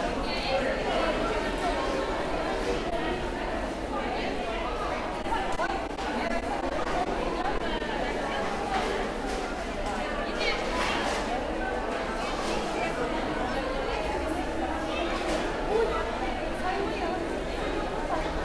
Lousã, Portugal, Market ambient
Vegetable Market ambient, people walking and talking, shouting, general noises